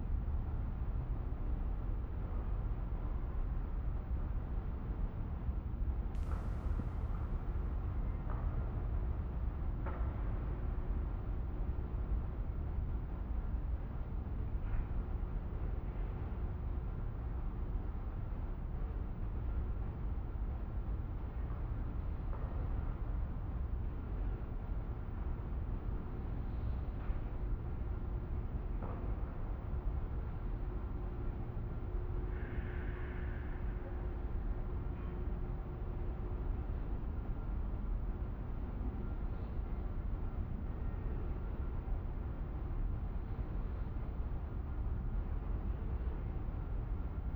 Arena-Sportpark, Am Staad, Düsseldorf, Deutschland - Düsseldorf, Esprita Arena, stadium
Inside the football stadium. The sounds of planes flying across the open football field and reverbing in the audience space and a crow chirping in the open building.
This recording is part of the intermedia sound art exhibition project - sonic states
soundmap nrw -topographic field recordings, social ambiences and art places